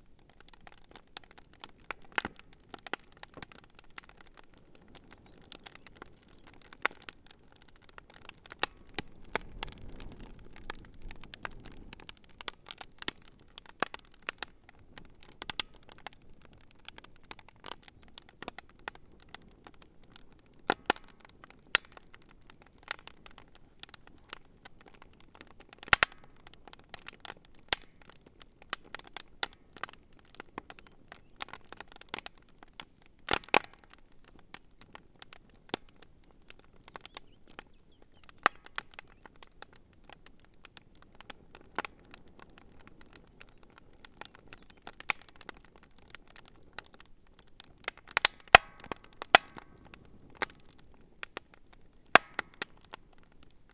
Recording of a camp fire at the campground in the Burynanek State Recreation area. A log is added to the fire about half way through the recording